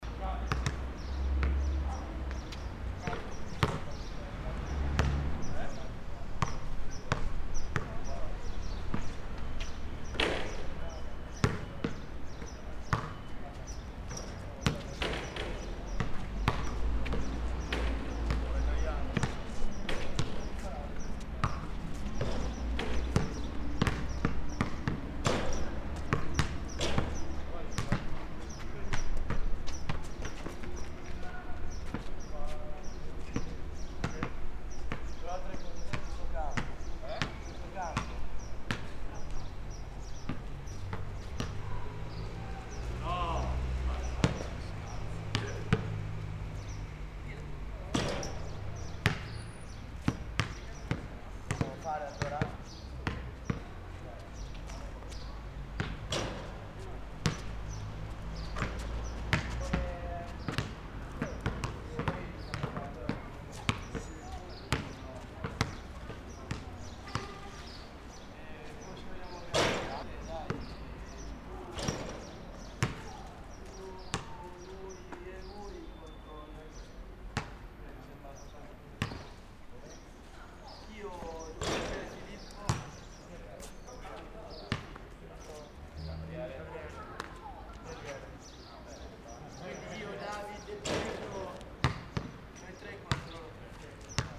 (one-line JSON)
{"title": "Perugia, Italia - people playing basket", "date": "2014-05-21 17:59:00", "description": "people playing basket, birds, traffic\n[XY: smk-h8k -> fr2le]", "latitude": "43.11", "longitude": "12.39", "altitude": "450", "timezone": "Europe/Rome"}